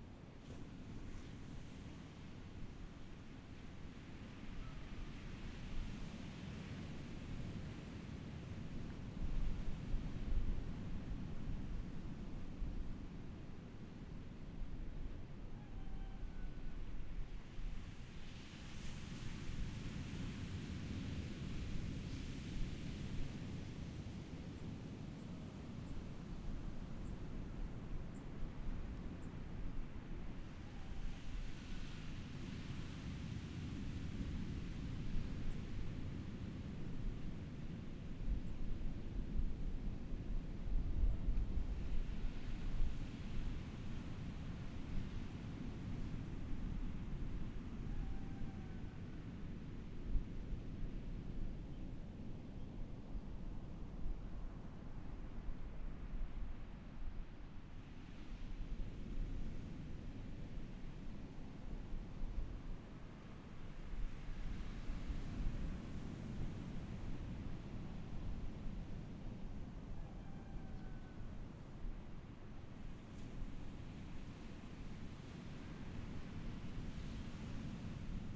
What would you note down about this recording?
Sound of the waves, Very hot weather, Chicken sounds from afar, Zoom H6 XY